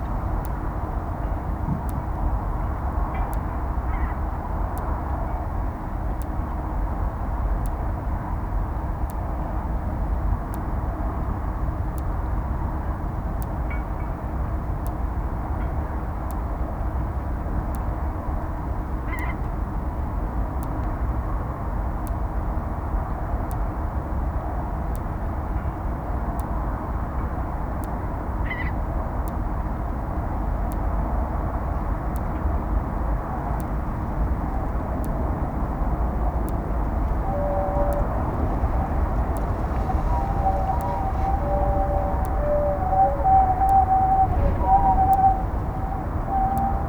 Drammendorfer Polder, Kubitzer Bodden - Electric sheep fence at dike
Electric sheep fence at dike sparks and catches wind